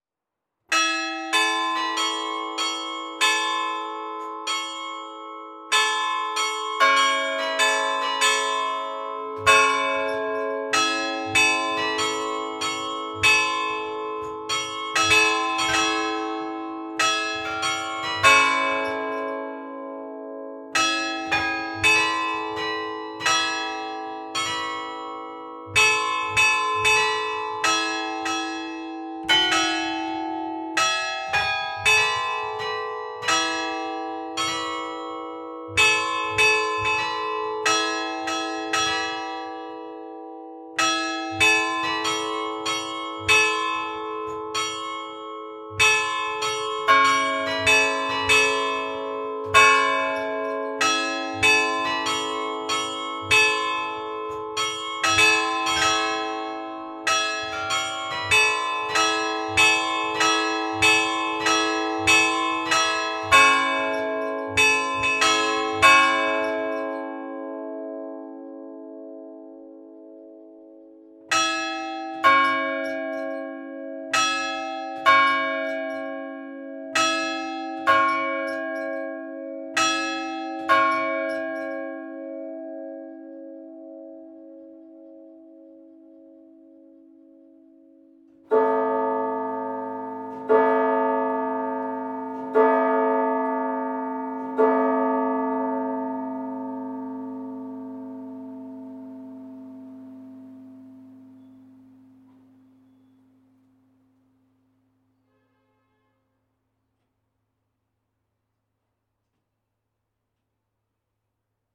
Rue de la Mairie, Douai, France - Douai - Carillon de l'hôtel de ville
Douai (Nord)
Carillon du beffroi de l'hôtel de ville
Ritournelles automatisées
5 June 2020, 14:00